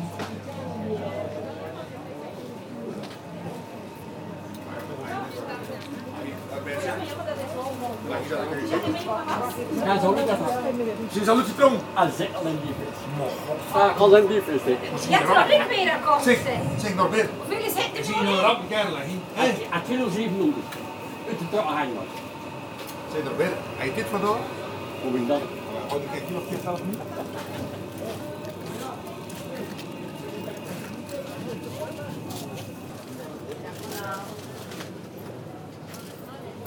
De Panne, Belgique - Local market
On a sunny saturday morning, the local market of De Panne. The sellers speak three languages : dutch, french and a local dialect called west-vlaams.